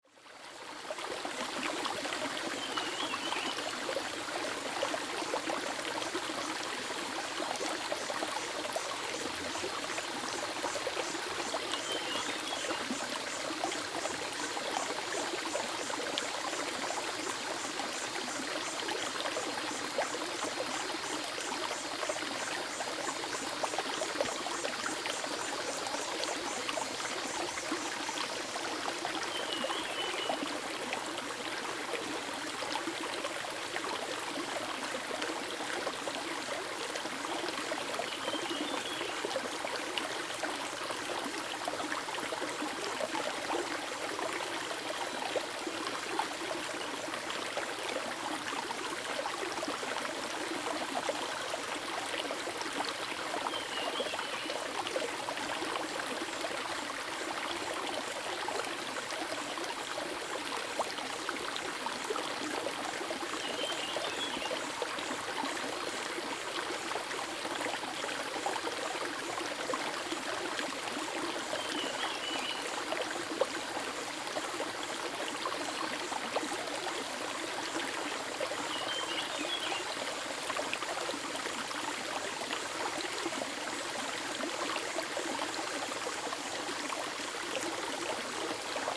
Hualong Ln., Yuchi Township - Upstream streams
Upstream, streams sound, Birds called
Zoom H2n MS+XY +Spatial audio
Nantou County, Puli Township, 華龍巷, 8 June 2016